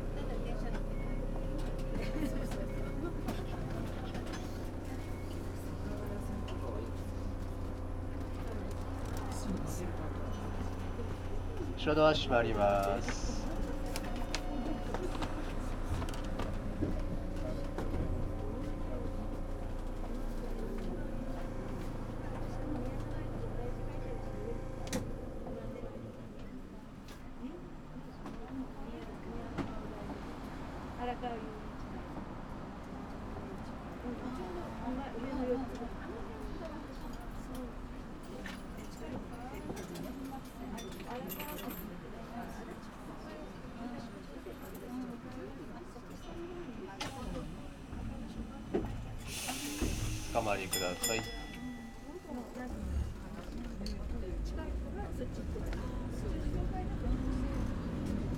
the tram was an rather old, small car. operated manually with a lever, rolling noisily across the city. conversations of passengers, announcements from the speakers (on all public transport in Japan announcements are made by the driver with a headset, along with the prerecorded massages), creaking and swishes of the car, street noise.
北足立郡, 日本, 28 March 2013